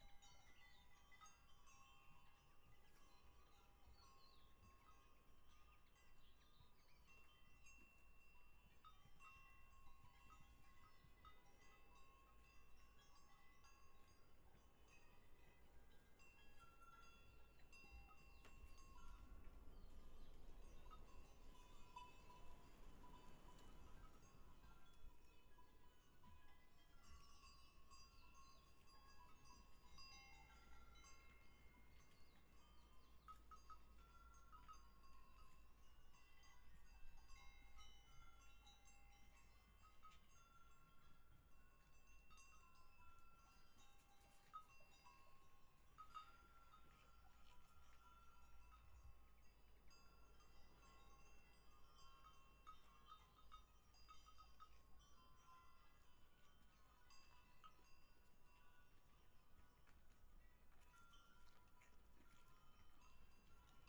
Patmos, Liginou, Griechenland - Weide Ziegen 0
Ein Tag später zur gleichen Zeit: Die Ziegen ziehen allmählich weiter.